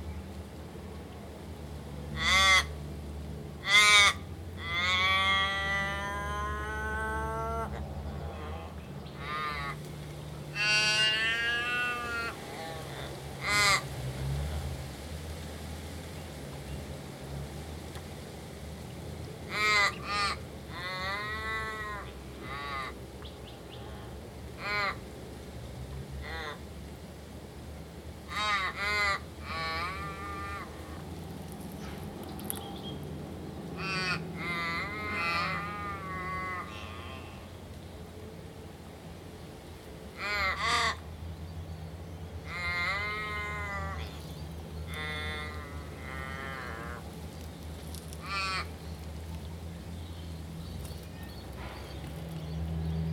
I was sitting on the river bank, near a culturally significant cave in North Fremantle. The cove is called Rocky Cove, and the cave is Wagyl Cave (Rainbow serpent). A cormorant surfaced next to me, then took off and flew away, and three Australian Ravens flew up and perched above me, talking to themselves.
23 October, 13:30